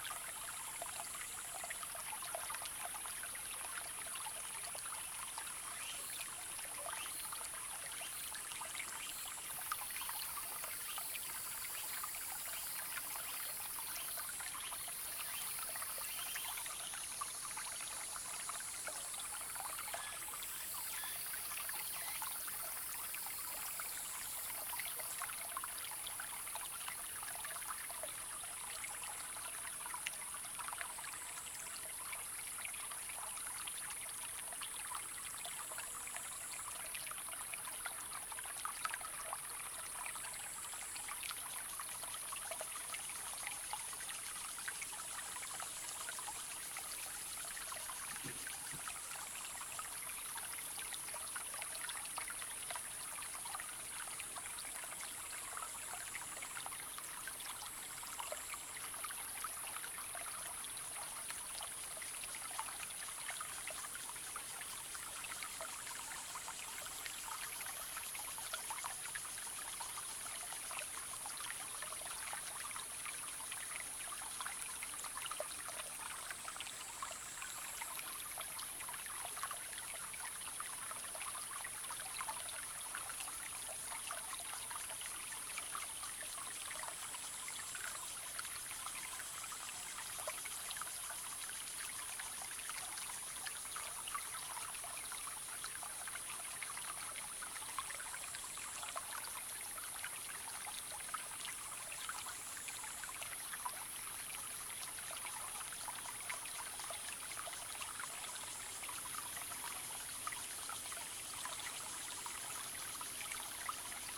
種瓜坑溪, 埔里鎮成功里, Nantou County - small stream
Brook, small stream, Sound of water
Zoom H2n MS+XY+Spatial audio